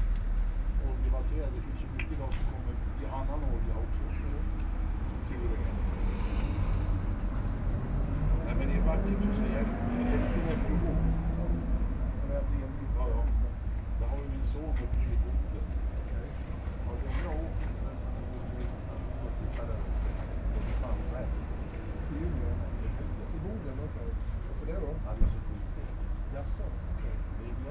Sollefteå, Sverige - Recycling paper and plastics
On the World Listening Day of 2012 - 18th july 2012. From a soundwalk in Sollefteå, Sweden. Recycling paper and plastics, a short discussion on recycling takes place around the containers. Recorded at the car parking place, recycling area of Coop Konsum shop in Sollefteå. WLD
18 July 2012, 19:35